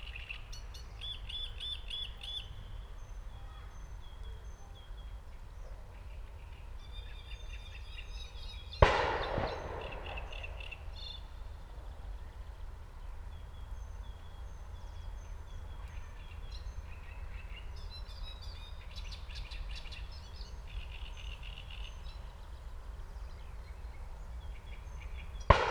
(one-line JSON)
{"title": "aleja Spacerowa, Siemianowice Śląskie - at the pond, reed warbler and shooting, echos", "date": "2019-05-21 12:00:00", "description": "Leisure park and nature reserve, Great reed warbler and shots from the nearby shooting range, distant churchbells\n(Sony PCM D50, DPA4060)", "latitude": "50.32", "longitude": "19.03", "altitude": "271", "timezone": "Europe/Warsaw"}